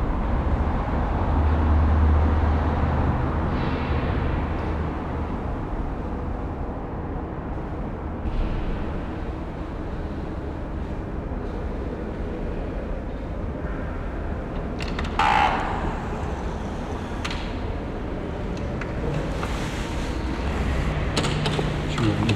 Unterbilk, Düsseldorf, Deutschland - Düsseldorf, Zollhof 11
Inside the wide, high and open, glass, steel and stone architecture. The ventilation, voices and the reverbing sounds of steps and doors in the central hall of the building.
This recording is part of the exhibition project - sonic states
This recording is part of the exhibition project - sonic states
soundmap nrw - sonic states, social ambiences, art places and topographic field recordings
Düsseldorf, Germany, 23 November 2012